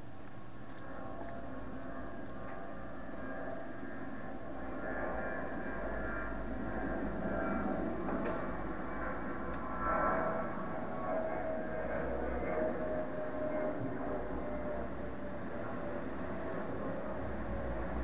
Recorded in the morning, with a cellphone in the interior of an apartment, there is a constant kind of hiss coming from a pc tower. Still, you can hear the characteristic sound of airplanes, people, and cars